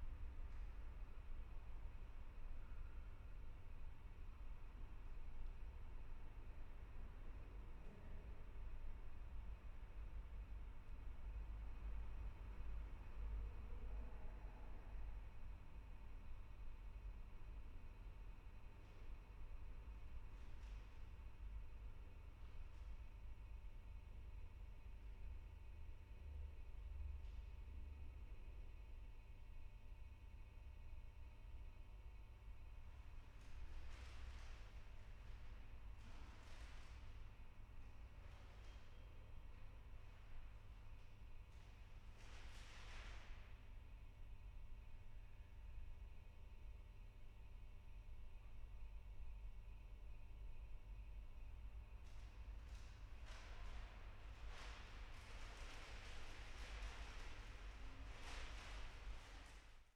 U-Bahn / subway Klosterstr. Berlin. this is a very quiet station out of business hours. sunday evening station ambience, buzz of electric devices, trains passing